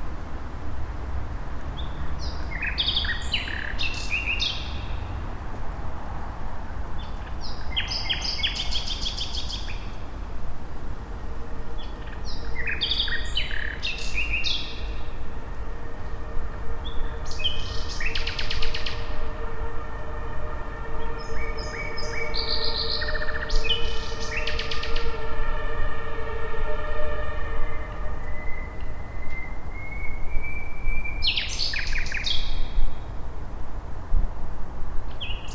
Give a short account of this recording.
handheld Zoom H1, 10pm in april 2014, next to u-bahn-station heinrich-heine-straße, warm weather with light drizzle